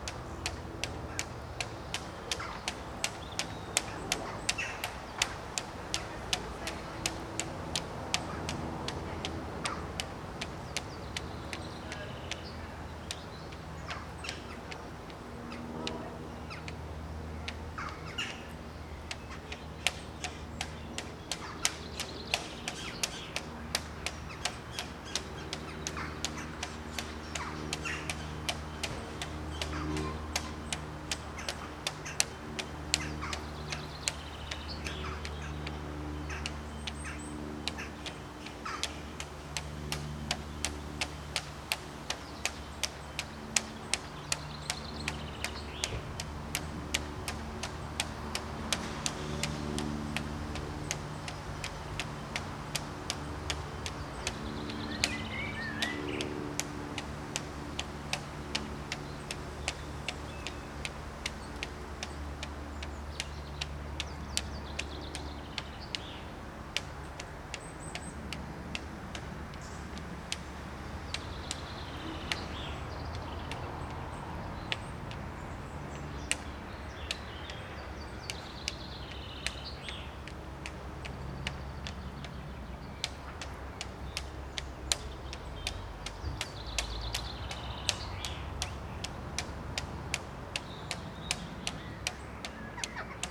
Hambleden, Henley-on-Thames, UK - The Peace and Tranquility of Hambledon Graveyard
The wind was up, the Copper Beech was fluttering in the breeze and the Jackdaws nesting in the bell tower were chattering to their young. The St George flag of England was fluttering in its self-importance and its lanyard flapped and clacked in rhythmic accompaniment. Sony M10 Rode Videomic Pro X with custom fluffy.